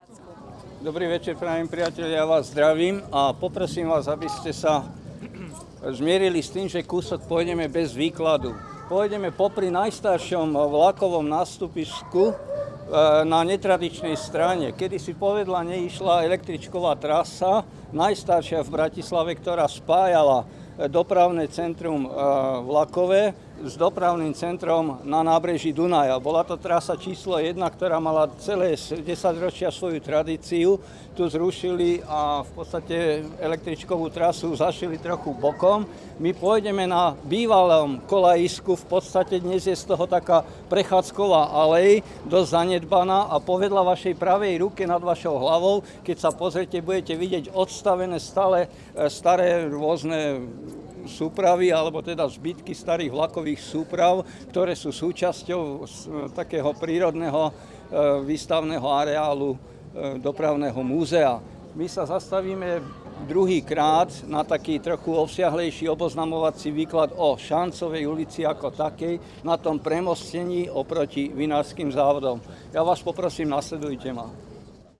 Hlavná stanica
Unedited recording of a talk about local neighbourhood.
Bratislava, Slovakia